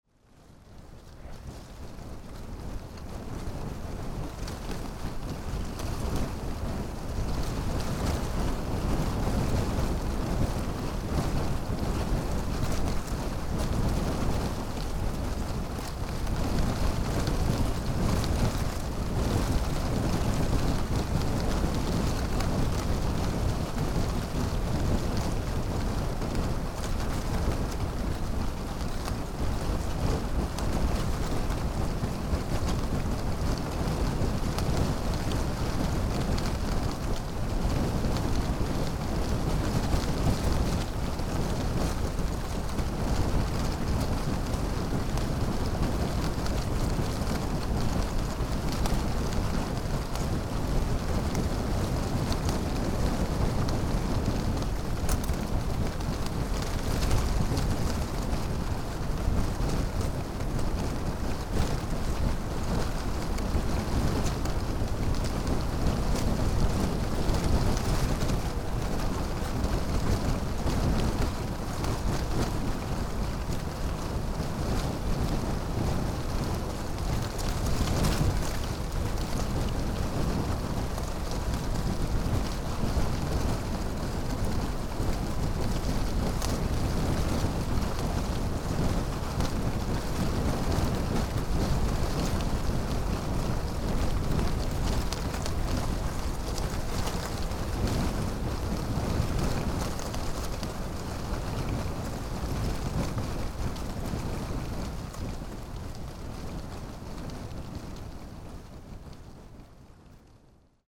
In an underground cement mine, wind is naturally blowing hardly in a chimney.
La Tronche, France, 28 March 2017